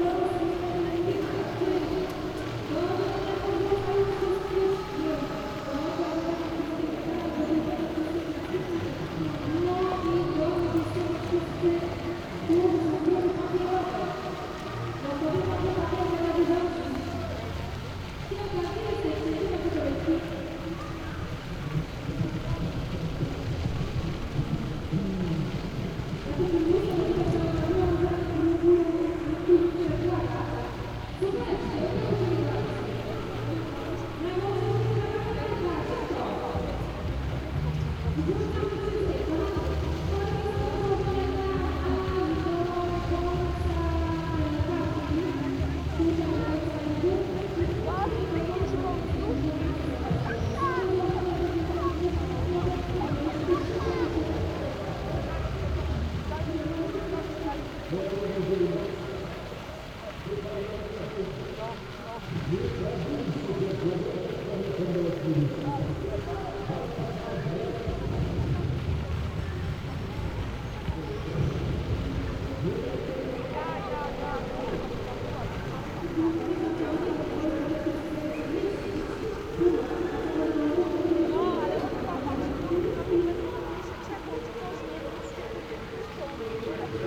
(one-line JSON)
{"title": "Jana Pawła, Siemianowice Śląskie - kids party at the main square", "date": "2019-05-25 12:05:00", "description": "kids party with amplifier and bouncy castle at the main square, echoes\n(Sony PCM D50)", "latitude": "50.31", "longitude": "19.03", "altitude": "275", "timezone": "GMT+1"}